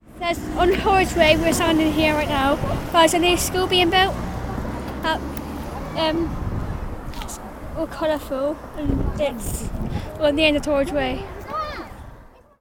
Efford Walk Two: Talking about new school - Talking about new school
Plymouth, UK, 4 October 2010, ~12:00